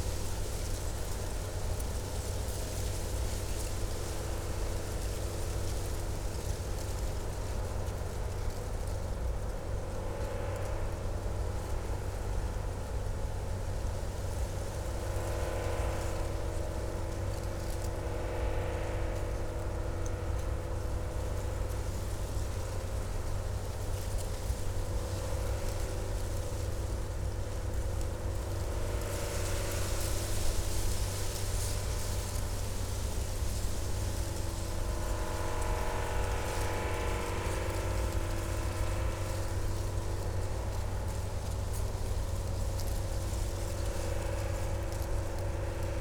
Utena, Lithuania - reeds and motor
whispers amongst the reeds and the distant roar of motor
19 November 2014